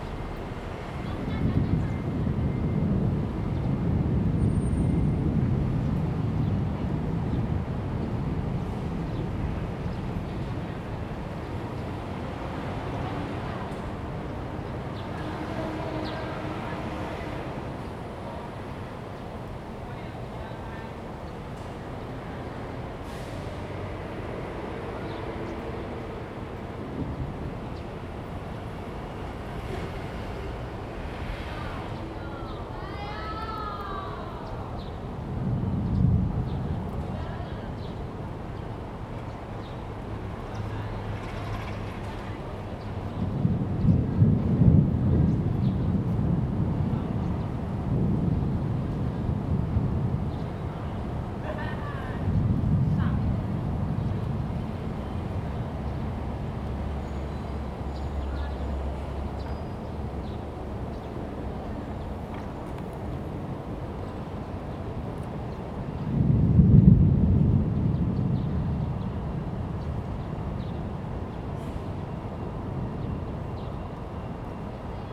碧潭, Xindian Dist., New Taipei City - Thunder and birds
Sitting on the embankment side, Viaduct below, Thunder
Zoom H2n MS+ XY
New Taipei City, Taiwan, 28 July, 15:04